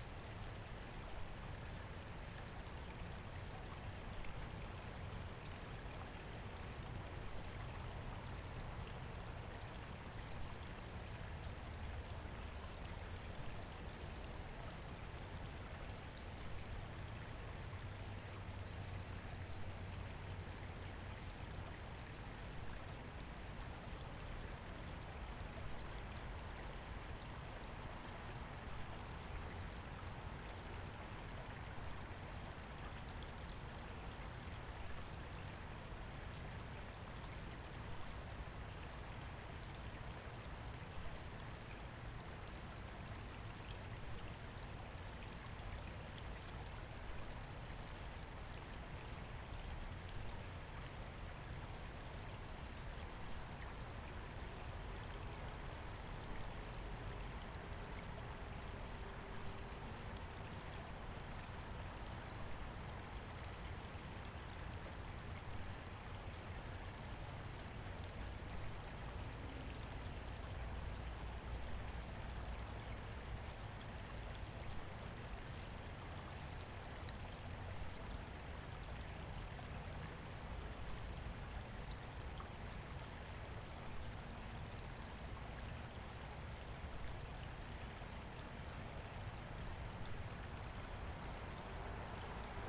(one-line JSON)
{"title": "Trumbull, CT, USA - Sounds of a Bird Feeder, CT", "date": "2013-12-26 18:30:00", "description": "Crocus Lane, Avon, Connecticut\nSounds of a Bird Feeder. Mainly red cardinals.\nby Carlo Patrão", "latitude": "41.28", "longitude": "-73.24", "altitude": "139", "timezone": "America/New_York"}